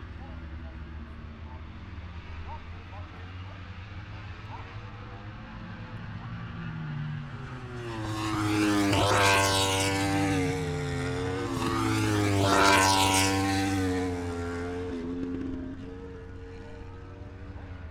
moto grand prix ... qualifying one ... Becketts corner ... open lavaliers clipped to chair seat ...
26 August 2017, 14:10